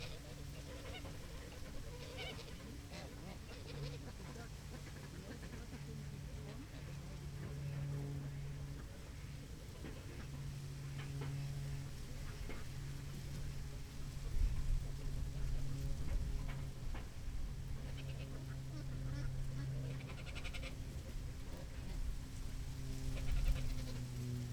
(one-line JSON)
{"title": "Berlin Wall of Sound, ducks n geese 080909", "latitude": "52.40", "longitude": "13.49", "altitude": "43", "timezone": "Europe/Berlin"}